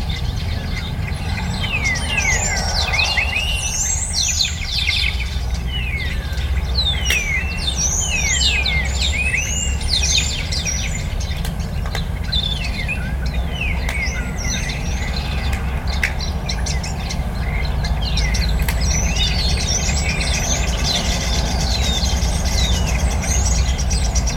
{"title": "Carrer de les Eres, Masriudoms, Tarragona, Spain - Masriudoms Sant Jaume Birds", "date": "2017-09-23 15:03:00", "description": "Recorded with a pair of DPA 4060s into a Marantz PMD661", "latitude": "41.02", "longitude": "0.88", "altitude": "199", "timezone": "Europe/Madrid"}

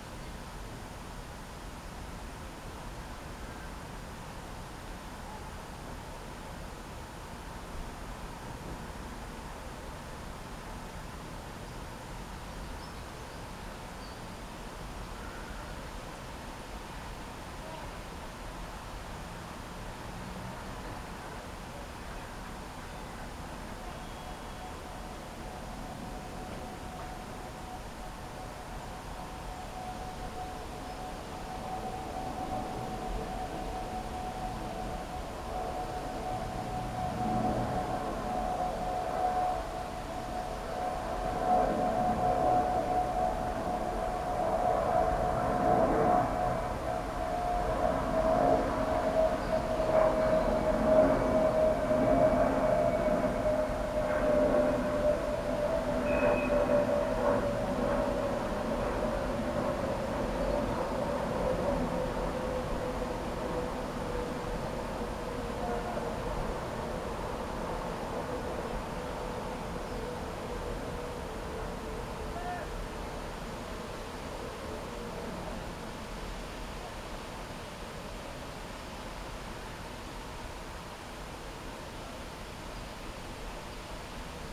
{"title": "Jean Monnet park, Sint-Agatha-Berchem, Belgium - Serenity of insane", "date": "2022-04-23 20:50:00", "description": "Sitting on the bench, with planes passing by every 3.5 minutes... In the distance, continuously, the noise of the traffic on the ring road... Plenitude of these alienated modern times.", "latitude": "50.87", "longitude": "4.29", "altitude": "51", "timezone": "Europe/Brussels"}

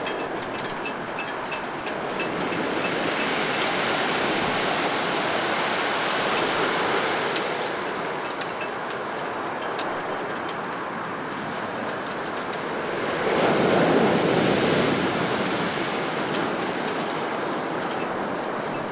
{"title": "Brighton Beach Boats - Brighton Beach Dinghy Rigging", "date": "2011-12-28 15:00:00", "description": "Dinghy rigging and sea...", "latitude": "50.82", "longitude": "-0.15", "altitude": "6", "timezone": "Europe/London"}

